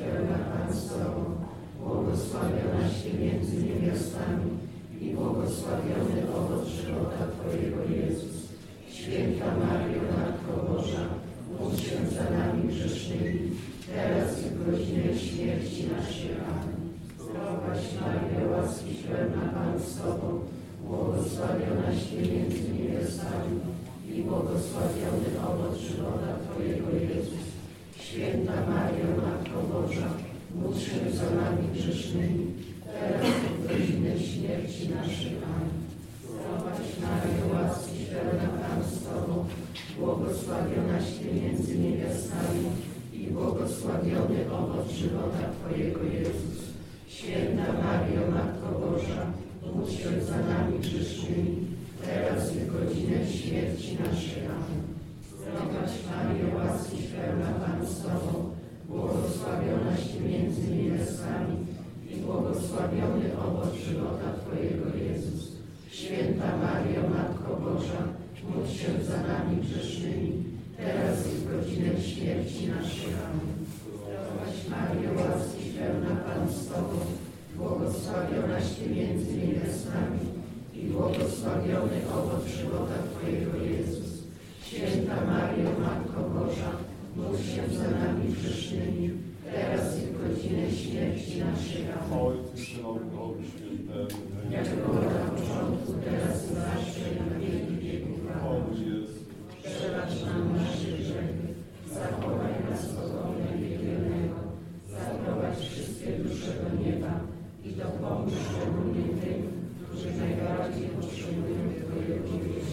Milk Grotto Church, Bethlehem - Singing, chanting and praying
This church was built upon a cave in which they say Jesus was fed with milk during the first weeks of his life. Today hundreds of religious pelgrims are visiting this place; some of them singing, chanting and praying ritual songs. While I was recording the ambiance, a group of polish tourists came in. (Recorded with Zoom4HN)